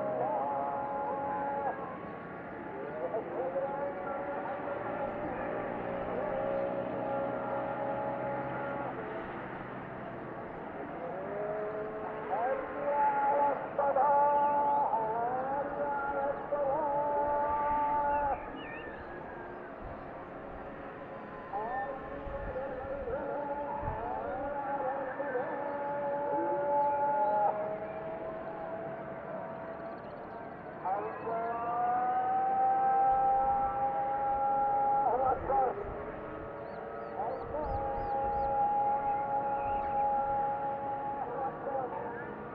Inane Sghir, Fès, Morocco - The Adhan, or Call to Prayer
From this spot, one can see across the old city of Fès, or Fès Bali, and hear all the sounds emanating from the city. This recording was made during the afternoon adhan, where the voices from many mosques mix with the natural sounds.